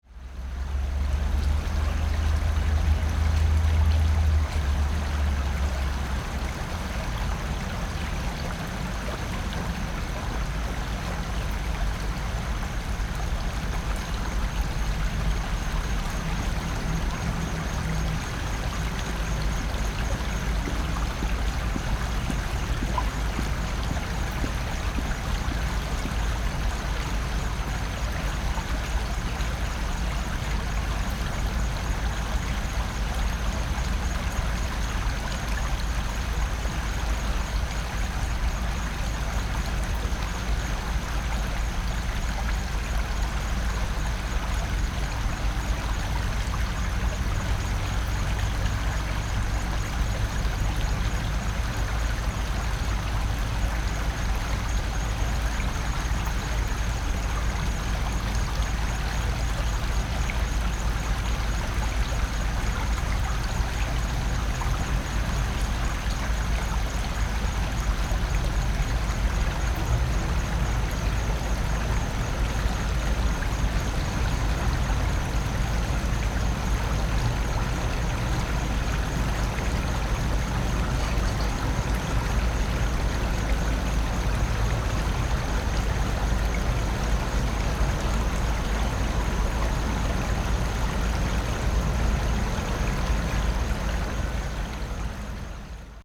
竹圍, 淡水區, New Taipei City - Stream
Stream, Footsteps
Binaural recordings, Sony PCM D50 + Soundman OKM II
Tamsui District, New Taipei City, Taiwan, 19 April 2012, ~19:00